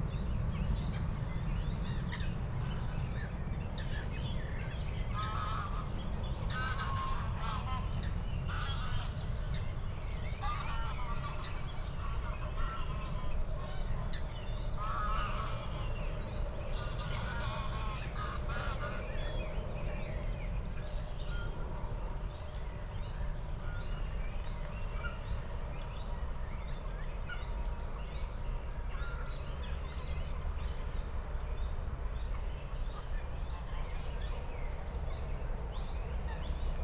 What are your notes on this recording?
ambience at Moorlinse pond, place revisited on a warm spring evening, (Sony PCM D50, DPA 4060)